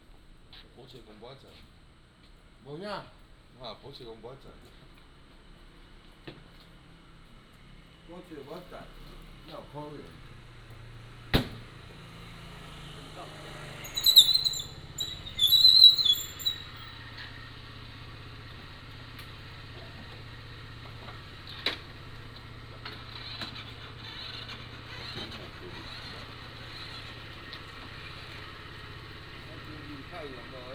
南寮村, Lüdao Township - Morning streets
Morning streets, In front of the convenience store
Taitung County, Lyudao Township, 31 October 2014